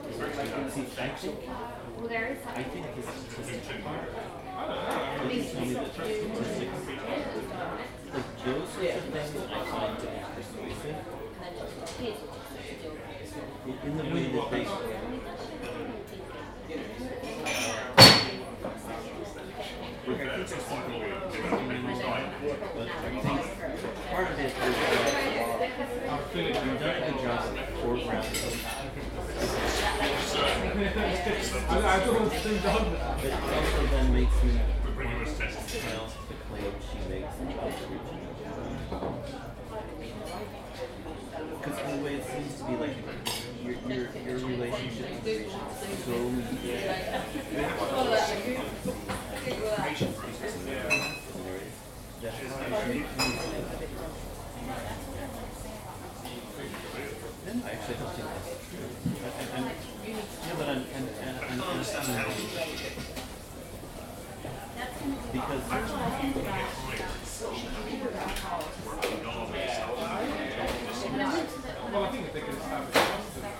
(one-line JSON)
{"title": "The Lamb Pub, Lamb's Conduit Street, London - The Lamb Pub, London.", "date": "2017-06-26 17:35:00", "description": "Late afternoon drinkers in a nearly 300 year old pub. Chatting, till sounds and empty bottles being thrown (loudly) into a recycle container. No music and very pleasant. Zoom H2n", "latitude": "51.52", "longitude": "-0.12", "altitude": "27", "timezone": "Europe/London"}